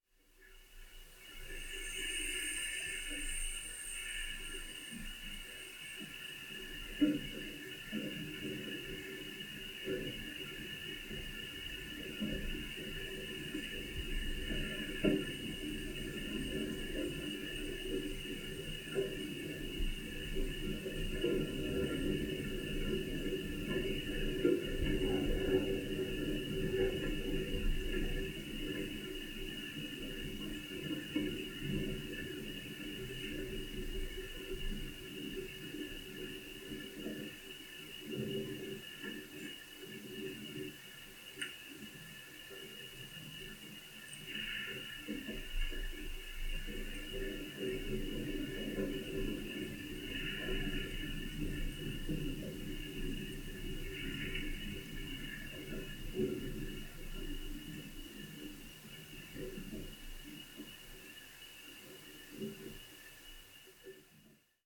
Crane Boom, Zombie Trail, Missouri, USA - Crane Boom
Contact mic attached to a metal lattice boom of a crane abandoned in the woods. The boom is approximately 30 feet long partially suspended above ground. Trees and other plants grow up through the lattice. The wind blows branches and leaves across the boom.